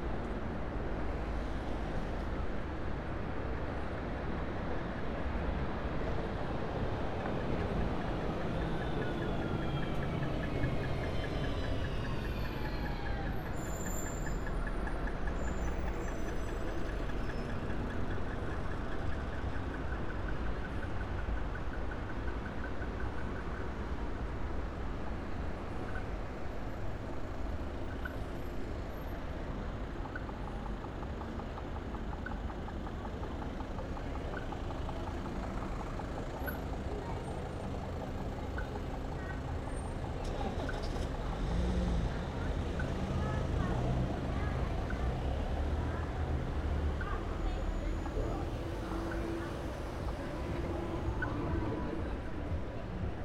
Rijeka, Croatia, Night Of Museums - Night of Museums - Sound Walk 2
Night Of Museums 2017 Rijeka